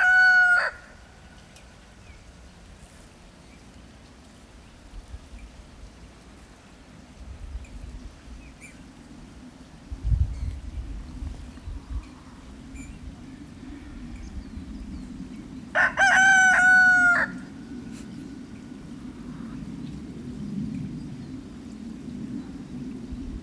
Der Hahn und seine Hühner. Auf dem Misthaufen an einem viel zu warmen Wintertag. Jedoch lag Schnee …
Und ein Flugzeug zieht am Himmel vorüber.
Bayern, Deutschland, 22 February 2022